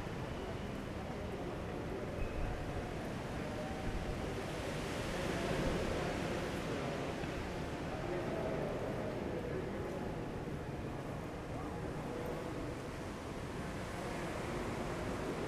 C., Centro, Mérida, Yuc., Mexique - Merida - espace sonore
Merida - Mexique
Un espace sonore empli de quiétude à l'intérieur du "Passage de la Révolution"